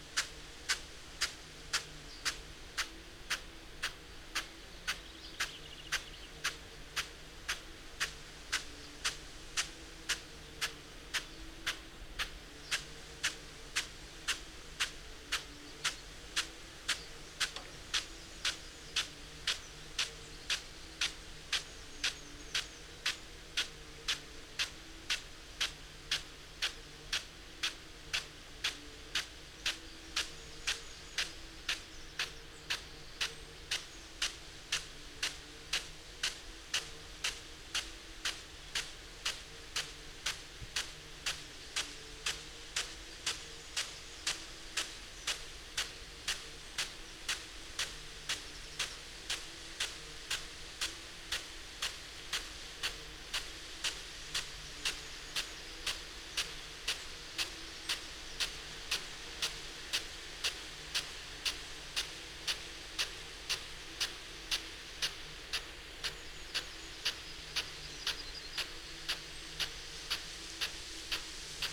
Croome Dale Ln, Malton, UK - field irrigation system ...
field irrigation system ... parabolic ... Bauer SR 140 ultra sprinkler to Bauer Rainstart E irrigation unit ... bless ...
England, United Kingdom, 20 May 2020, 07:00